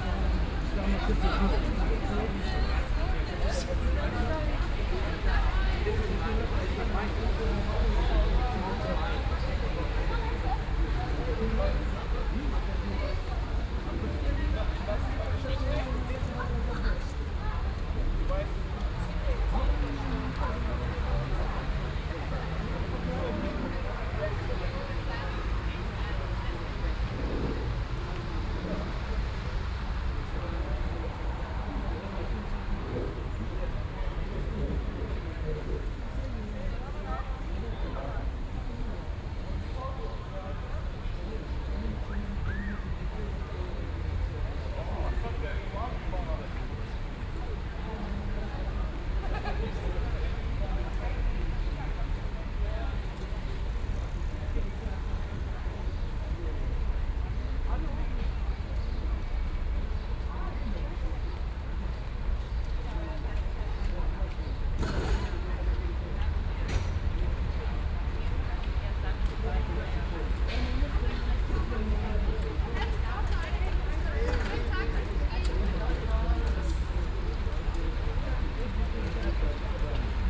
Binaural recording of the square. First of several recordings to describe the square acoustically. The 19th of May 2017 was a rainy day, the cars are quite loud.
Koblenz, Germany, May 2017